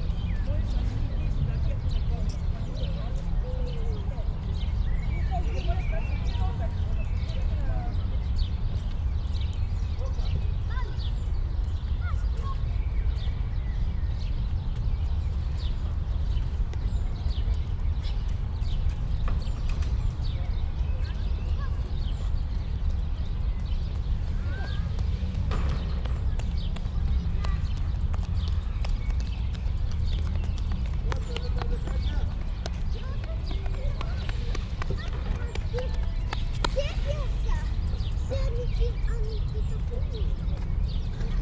park ambience, distant traffic roar, omnipresent in Athens, heard on top of an abandoned fountain.
(Sony PCM D50, DPA4060)
Athina, Greece, 7 April 2016, 17:35